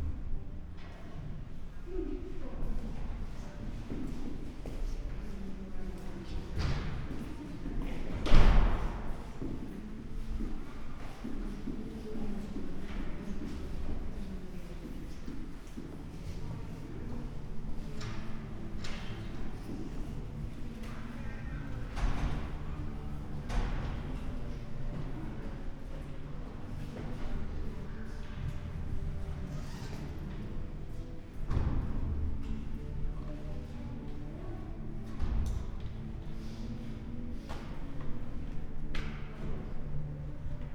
Altes Gymnasium, Oldenburg, Deutschland - enry hall ambience
Altes Gymnasium Oldenburg, entry hall, ambience, sounds of a reheasal from the concert hall above
(Sony PCM D50, Primo EM172)